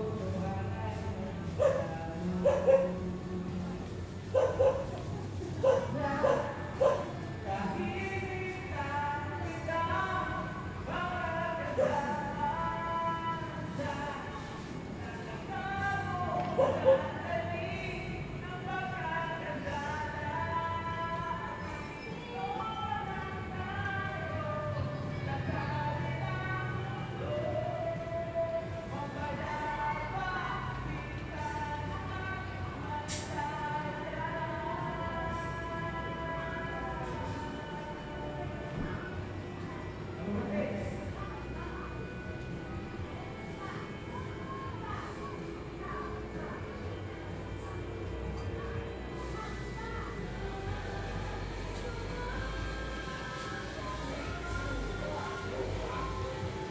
There is a home-karaoke party in the neighbourhood. People pass by by walking, in tricycles and in cars by the balcony from where I captured these sounds on a sunday evening. WLD 2016
Laguna, Philippines